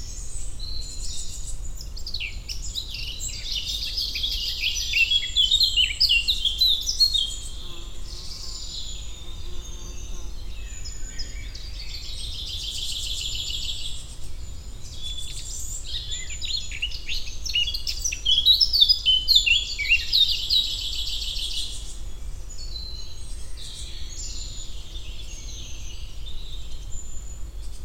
Eurasian Blackcap, Common Chaffinch, Blackbird, Robin, juvenile Great Tit.
3 June, 09:55